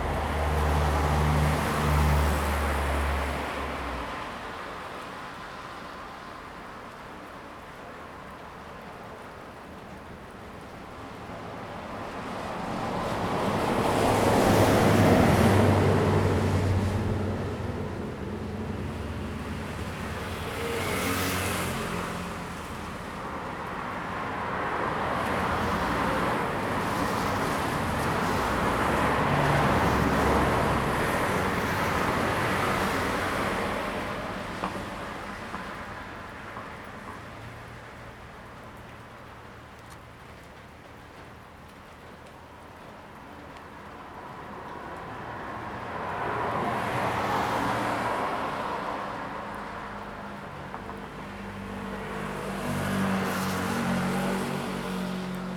Chengguang Rd., Chenggong Township - Traffic, rain, Thunder
Traffic Sound, The sound of rain, Thunder
Zoom H2n MS+XY